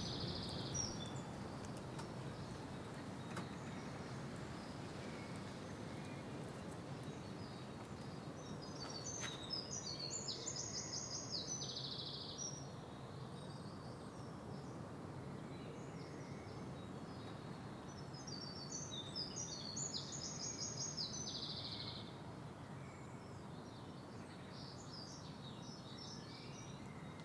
Contención Island Day 82 inner west - Walking to the sounds of Contención Island Day 82 Saturday March 27th
The Poplars High Street Graham Park Road Elmfield Approach
Along the ginnel
ivy winds through a wall-top fence
a wren sings
Wall top moss
red brown and green strokes
painted on mortar lime
Pitted surfaces of the wall’s stone
signature
of the mason’s pick